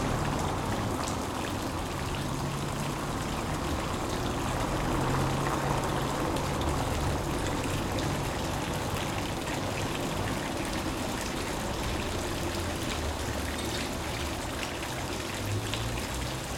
Artesian well - Artesian Well
A artesian Well in the Heart of Ulm. Recorded with a tascam dr680 und a nt4
12 July 2012, ~12:00